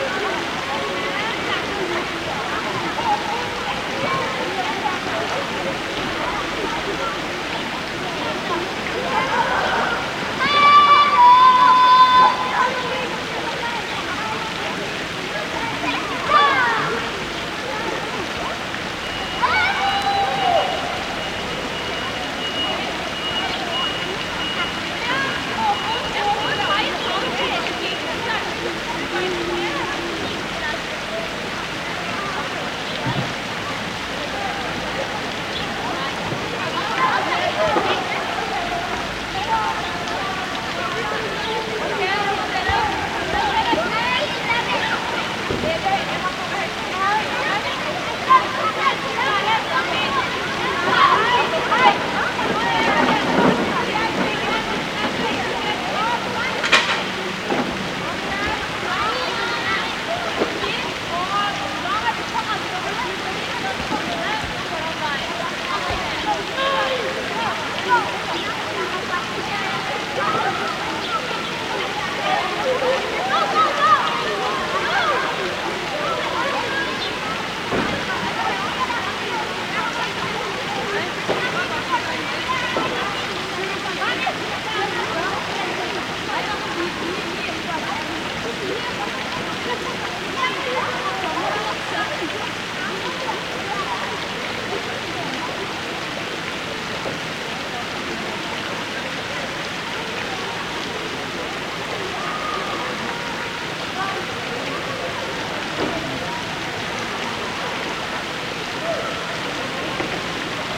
Torvet, Arendal, Norway - Water from fountain, deliveries being unloaded and children playing on the playground.
Recorded with Tascam DR-40 out of a 3rd floor office building pointing down to the square where you can hear children playing while water dances from the fountain | Andrew Smith
Agder, Norge, 2 September 2021